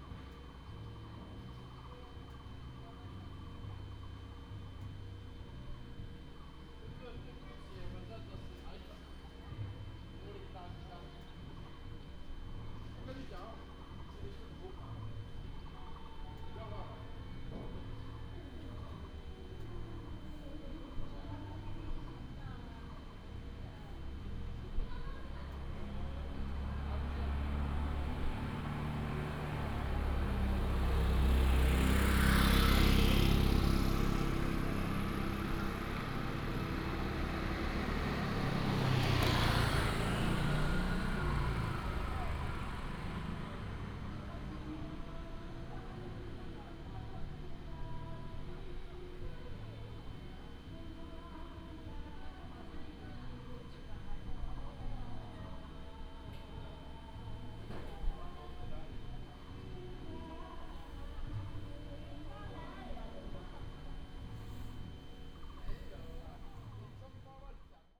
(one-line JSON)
{"title": "Tuban, Daren Township, Taitung County - In aboriginal tribal streets", "date": "2018-04-13 14:31:00", "description": "In aboriginal tribal streets, Bird cry, traffic sound, Air conditioning sound\nBinaural recordings, Sony PCM D100+ Soundman OKM II", "latitude": "22.46", "longitude": "120.89", "altitude": "156", "timezone": "Asia/Taipei"}